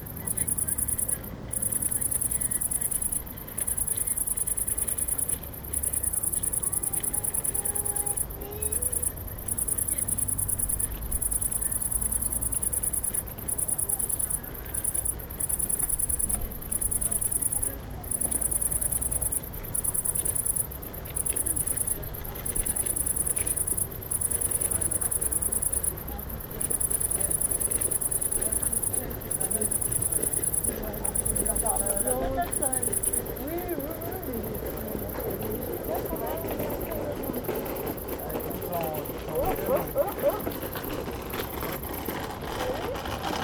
Sahurs, France - Locust
A powerful locust sing in the grass of a pasture.